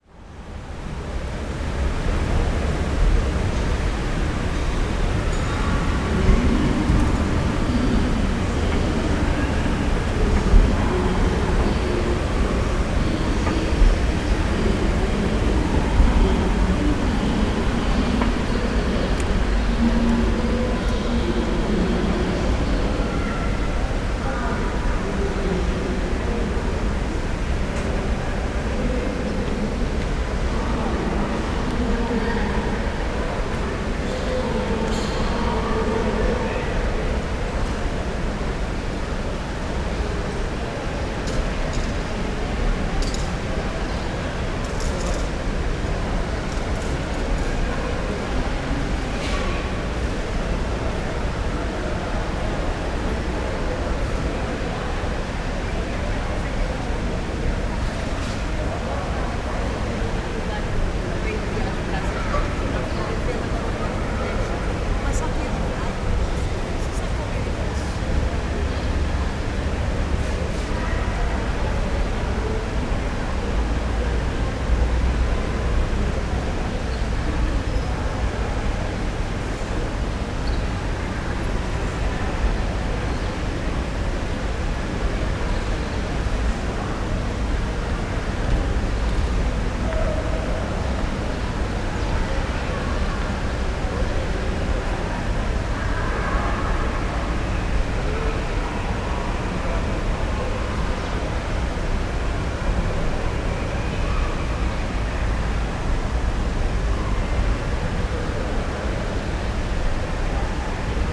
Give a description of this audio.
Atocha train station, the site of the bombings in 2004 in Madrid Spain